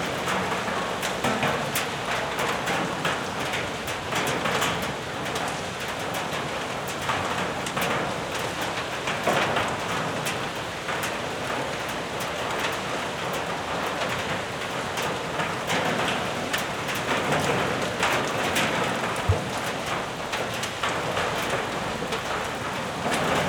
Punto Franco Nord, Trieste, Italy - intense rain in hall
waiting for the rain to end
(SD702, AT BP4025)
11 September 2013